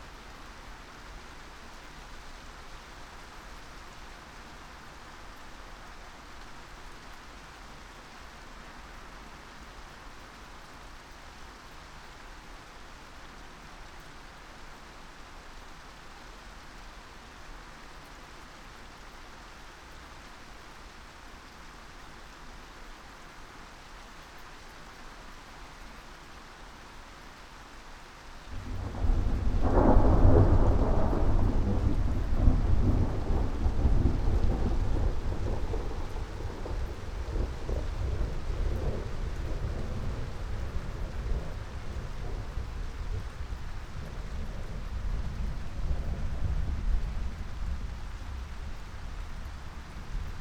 Luttons, UK - thunderstorm ... moving away ...
thunderstorm ... moving away ... xlr sass to zoom h5 ... background noise ...